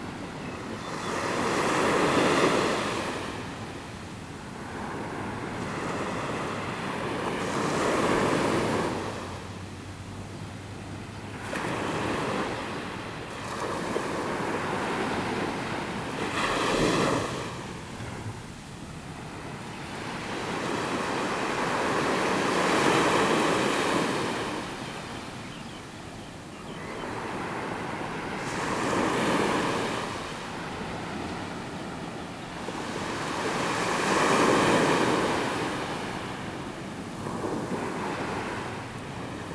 淺水灣海濱公園, 三芝區後厝里, New Taipei City - the waves
Aircraft flying through, Sound of the waves
Zoom H2n MS+H6 XY
15 April 2016, ~07:00